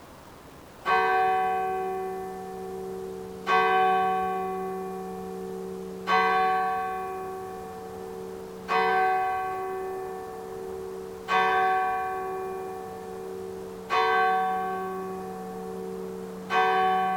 enregistré sur le tournage de Louis XVI la fuite à varennes darnaud selignac France 2